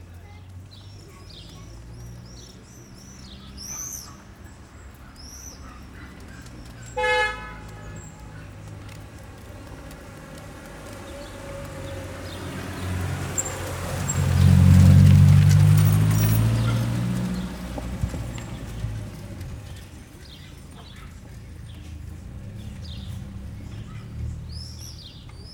Tallinn, Oismae - saturday morning ambience
saturday morning soundscape in front of a building block in oismae, tallinn.